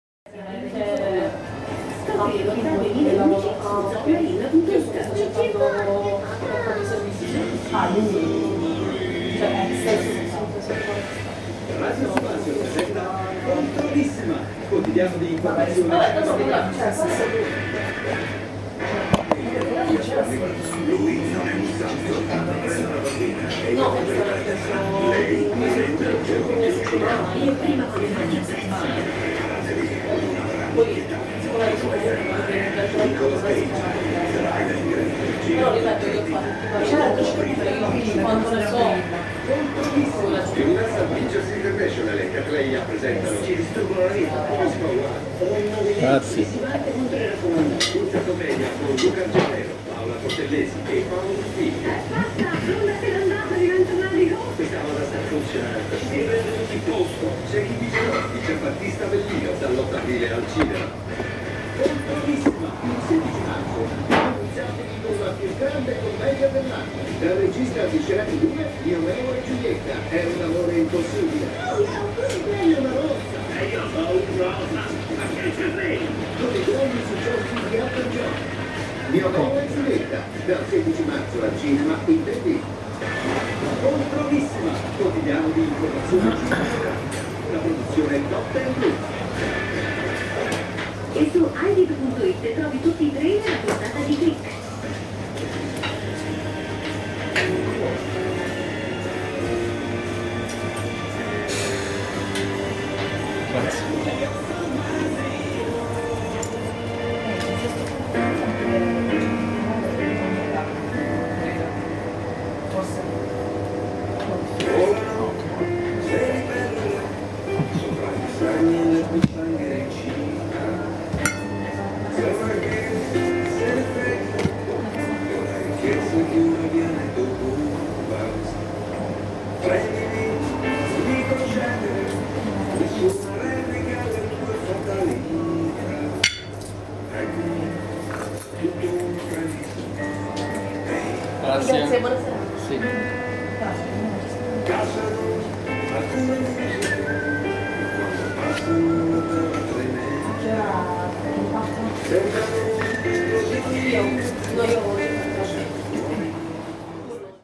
eating at Da Vittorios
sittting at Da Vittorios restaurant, a small hole-in-the-wall near piazza cosimato, and enjoying the food and conversation